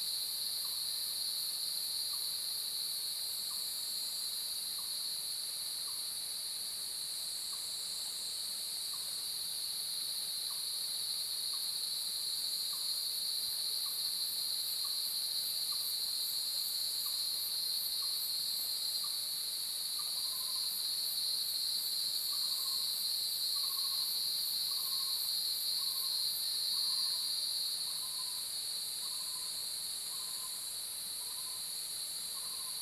魚池鄉五城村, Taiwan - At the edge of the woods
early morning, Cicadas sound, At the edge of the woods
Zoom H2n Spatial audio